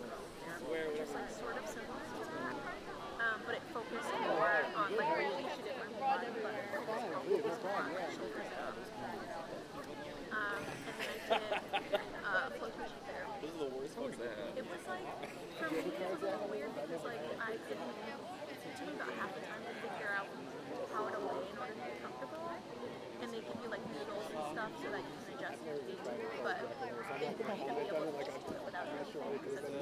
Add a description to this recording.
The Old Main Quad at SUNY New Paltz is a place where many students and the public will relax and enjoy the outdoors. This recording was taken during a student run organization function called, "Fall Fest". The recording was taken using a Snowball condenser microphone with a sock over top to cut the wind. It was edited using Garage Band on a MacBook Pro.